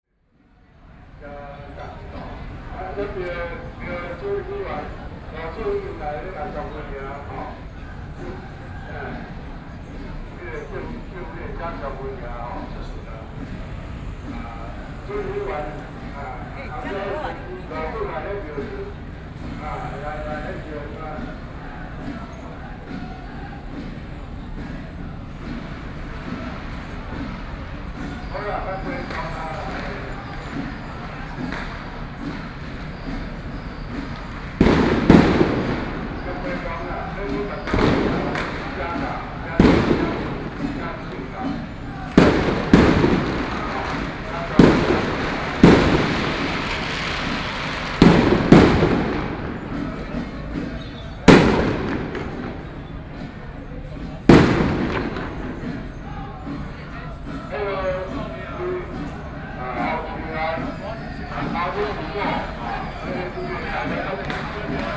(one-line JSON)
{"title": "Kangding Rd., Wanhua Dist., Taipei City - Traditional temple festivals", "date": "2012-11-15 12:19:00", "description": "Traditional temple festivals, Binaural recordings, Sony PCM D50 + Soundman OKM II, ( Sound and Taiwan - Taiwan SoundMap project / SoundMap20121115-9 )", "latitude": "25.05", "longitude": "121.50", "altitude": "10", "timezone": "Asia/Taipei"}